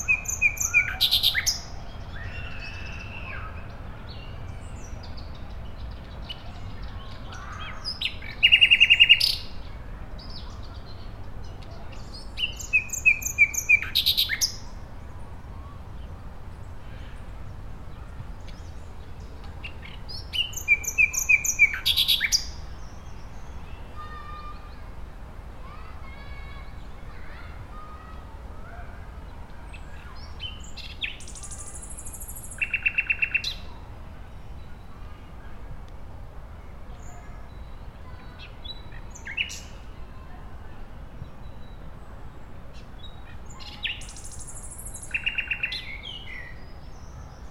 Gustav-Meyer-Allee, Berlin, Deutschland - Nightingale in the park
Nightingale in the park, a couple passing by, children on a playground, distant traffic noise